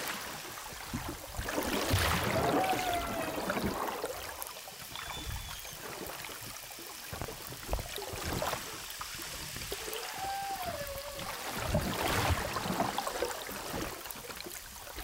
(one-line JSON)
{"title": "premier enregistrement de cetace", "date": "2010-07-24 15:45:00", "description": "Hydrophone_Chant des baleines_22/07/2010", "latitude": "-21.00", "longitude": "55.25", "timezone": "Indian/Reunion"}